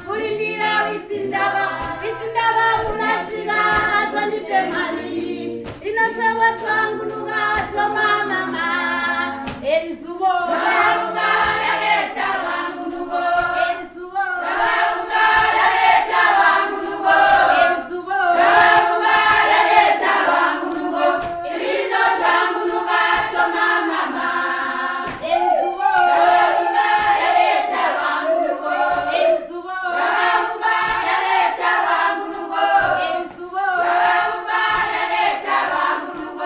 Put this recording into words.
the members of the Kariyangwe Women Forum are telling in song about the benefits of Zubo’s programmes they are experiencing. Zubo twalumba ! Thanks to Zubo! Zubo Trust is a women’s organization bringing women together for self-empowerment.